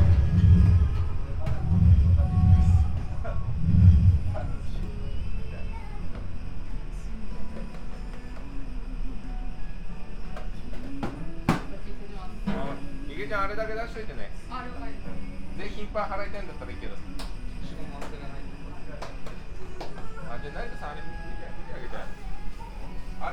radio, spoken words, alternating with strong roar, no other customers at the moment, kitchen sounds - big pots, boiling waters and noodles ...
Tokyo, Japan, 18 November 2013